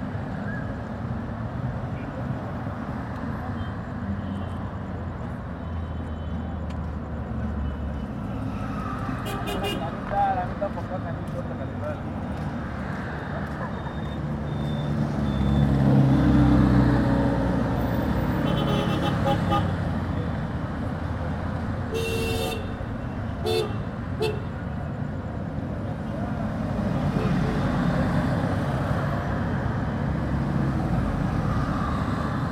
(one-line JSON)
{"title": "Ismailia, Qasr an Nile, Al-Qahira, Ägypten - Tahrir Square Traffic", "date": "2012-05-08 18:36:00", "description": "recorded in the evening hours (circa 9.15pm). Zoom H4N with internal microphones. Traffic at the Square.", "latitude": "30.05", "longitude": "31.24", "altitude": "18", "timezone": "Africa/Cairo"}